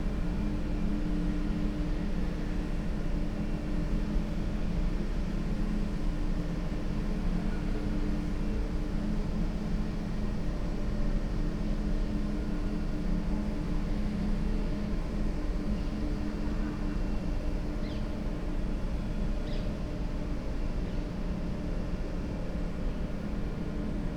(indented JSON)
{
  "title": "DB area, Krefelder Wall, Köln - ventilation noise pattern",
  "date": "2017-05-10 20:30:00",
  "description": "interfering ventilation drones\n(Sony PCM D50, Primo EM172)",
  "latitude": "50.95",
  "longitude": "6.95",
  "altitude": "54",
  "timezone": "Europe/Berlin"
}